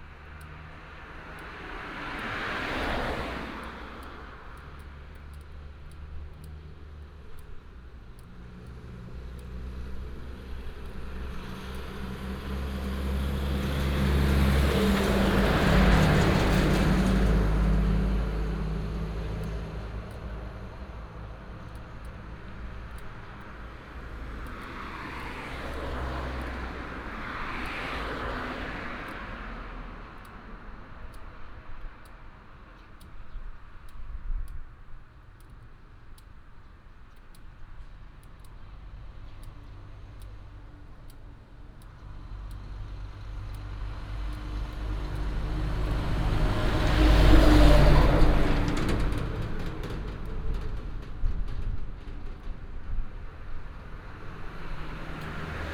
田洋村, Minsheng Rd., Baozhong Township - Small village
Traffic sound, Taiwan's famous late singer's hometown(Teng Li-Chun)
1 March 2017, ~13:00